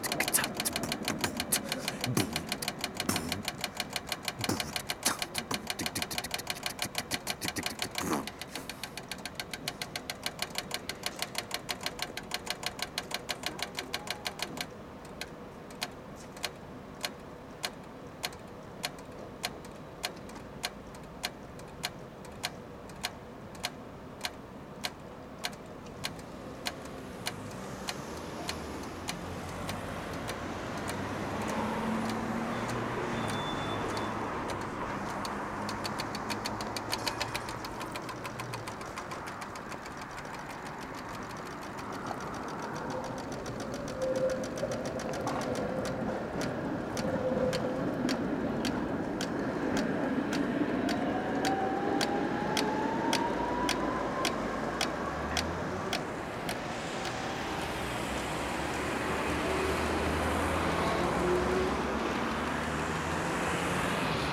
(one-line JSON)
{"title": "Prague, traffic light by sound", "date": "2010-11-10 13:47:00", "description": "What if a blind person wanted to cross the tram tracks behind Prague Castle? Fortunately, a sound device is giving a signal whether the light is green or red. Unfortunately, they are just as arbitrary as the colours, so which is which?", "latitude": "50.09", "longitude": "14.40", "altitude": "259", "timezone": "Europe/Prague"}